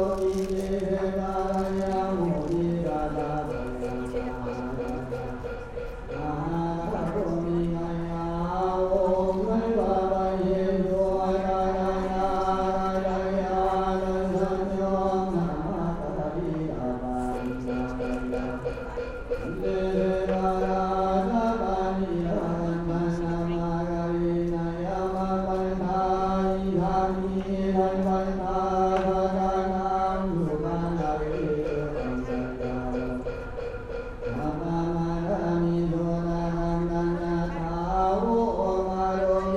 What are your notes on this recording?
Outside The Great Hero Hall, Seoul